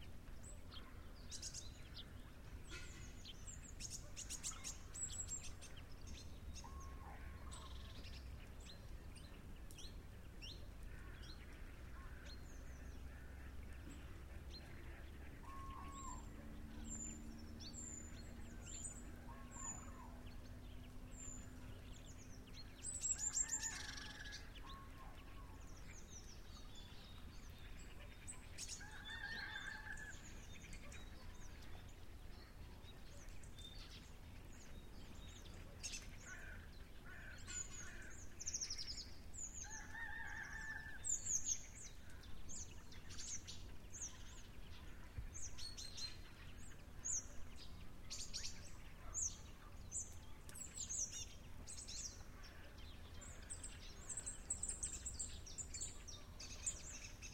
aestere/latvia, morning scape
excerpt from a quiet, transparent soundscape on a wet and cold morning. thinking of jana ...
24 September 2009